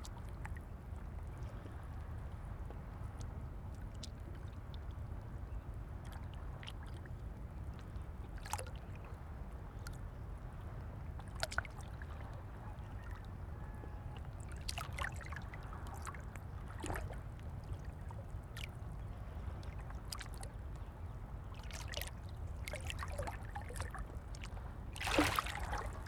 I had to have my H4n right on the edge of the rocks to get a good signal which was pretty scary but I really happy with this recording.
23 October 2011